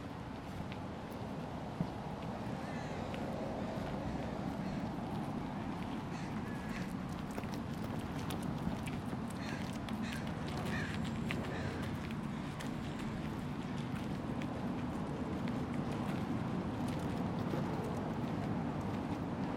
Greenlake Park, Seattle Washington
Part twoof a soundwalk on July 18th, 2010 for World Listening Day in Greenlake Park in Seattle Washington.